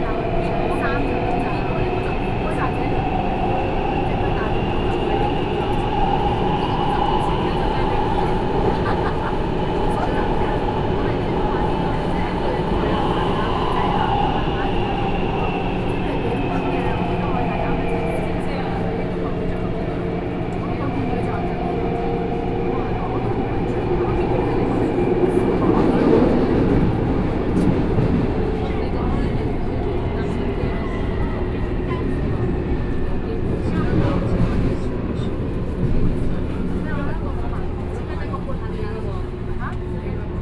Hong Kong tourists dialogue sound, from Sun Yat-Sen Memorial Hall station to Taipei Main Station, Sony PCM D50 + Soundman OKM II